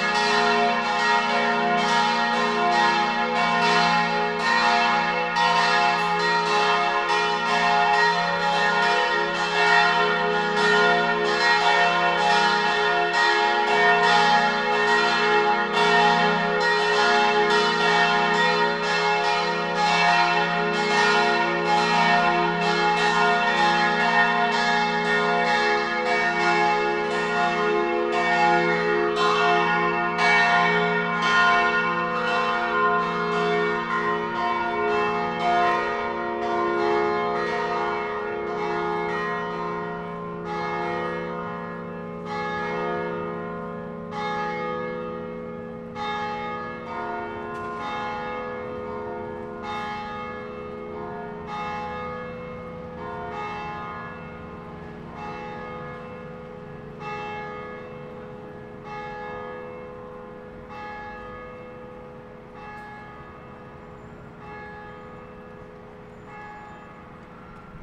St. Isztvan Basilicas bells recorded from the window at the fourth floor of adjacent building.